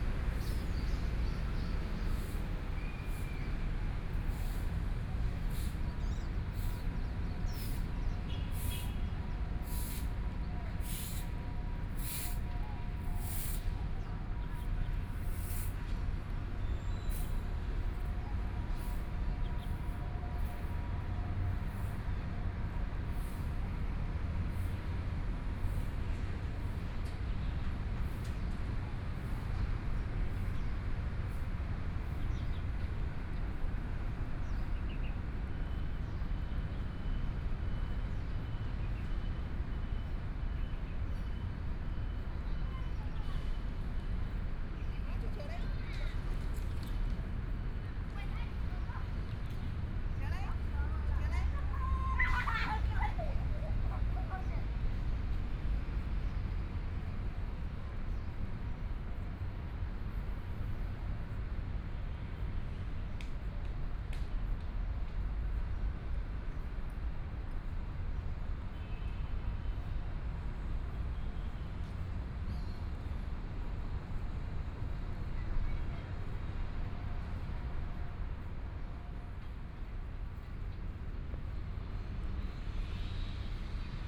中山區林森公園, Taipei City - soundwalk
Walking through the park from the corner, Traffic Sound, Walking towards the north direction